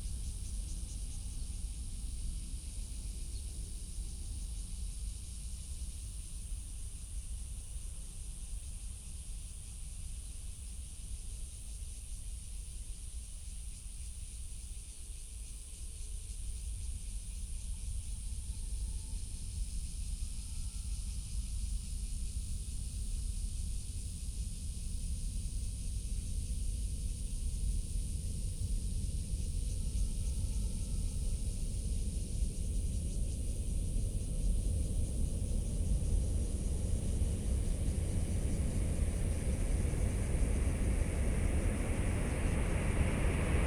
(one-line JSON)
{"title": "Ln., Sec., Yimin Rd., Xinpu Township - High speed railway", "date": "2017-08-17 08:27:00", "description": "Near the tunnel, birds call, Cicadas sound, High speed railway, The train passes through, Zoom H6", "latitude": "24.84", "longitude": "121.05", "altitude": "59", "timezone": "Asia/Taipei"}